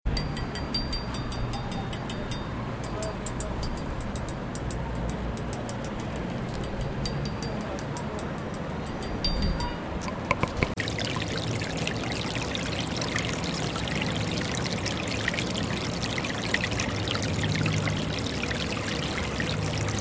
1, place des rencontres 26500 bourg-les-valence
10 March 2011, 16:04